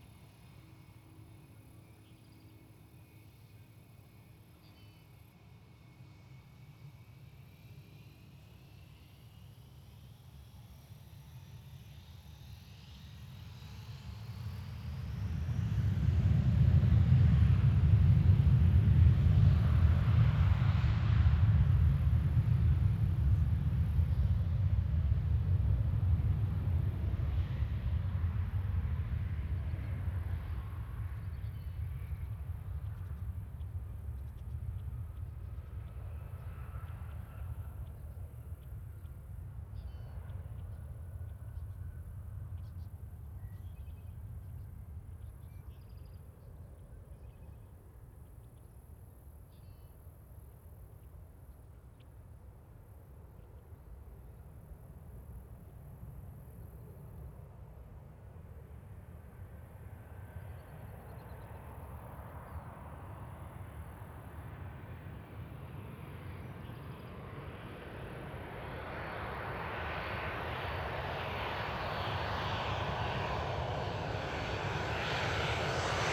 MSP 12R Approach - MSP 12R Approach 2022-07-10 1915
Recorded directly under the final approach for Runway 12R at Minneapolis/St Paul International Airport. Aircraft are no more than a couple hundred feet off the ground at this point. Planes departing on 17 can also be heard. Theres some noisy birds that can be heard as well.